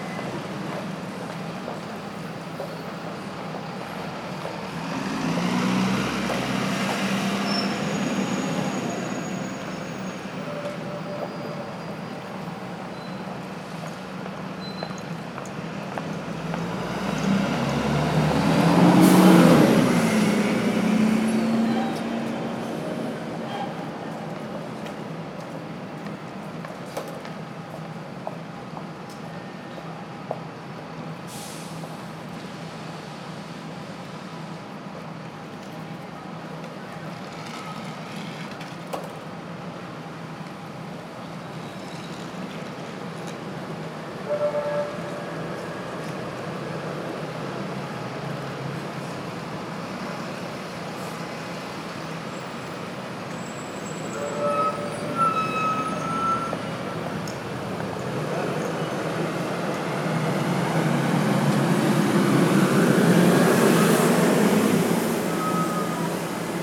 This is a recording made at the Manchester Piccadilly bus station. It was a chilling afternoon, yet, very busy as usual.
Manchester, Greater Manchester, UK, 16 September 2010